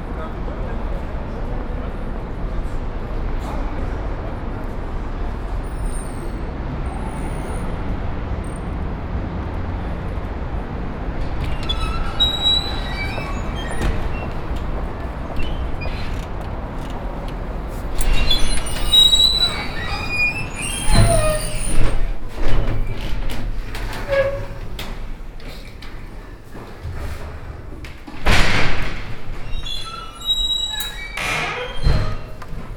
Brussels, Palais de Justice / Courthouse.